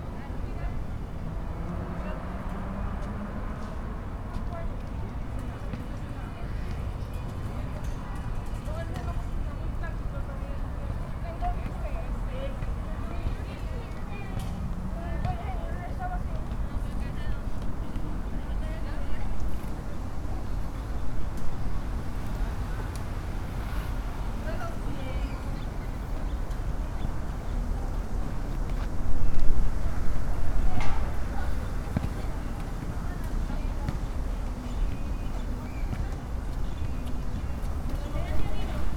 P.º de Los Quetzales, San Isidro, León, Gto., Mexico - A Wednesday afternoon at San Isidro Park.
I made this recording on August 25th, 2021, at 7:11 p.m.
I used a Tascam DR-05X with its built-in microphones and a Tascam WS-11 windshield.
Original Recording:
Type: Stereo
Un miércoles por la tarde en el Parque San Isidro.
Esta grabación la hice el 25 de agosto de 2021 a las 19:11 horas.